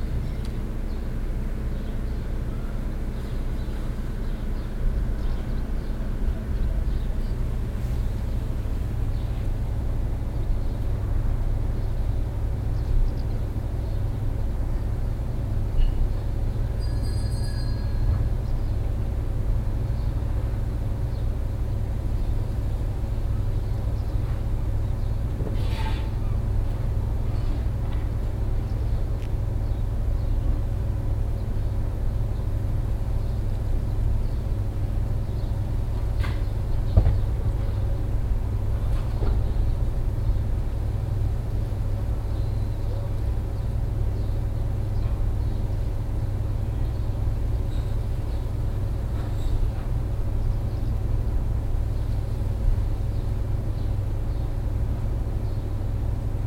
audresseles, rose des vents, gartenmorgen - audresseles, rose des vents, garten + restaurantklingel
nachmittags im garten, das rauschen der lüftungsanlage des angrenzenden restaurants und die klingel der küche, die eine fertiggestellte mahlzeit markiert
fieldrecordings international:
social ambiences, topographic fieldrecordings